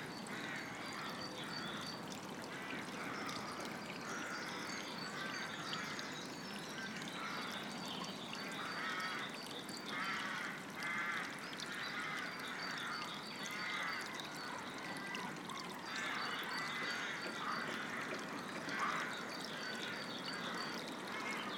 I got the ferry from Falmouth to St Maws in a stiff westerly wind, but on landing and only after a short walk I came across a lovely sheltered valley with a large Rookery in it. A small stream ran down the valley along side a small playground, and in the oak trees above were the young rooks in their nests. Sony M10 built-in mics.
England, UK, 22 March 2018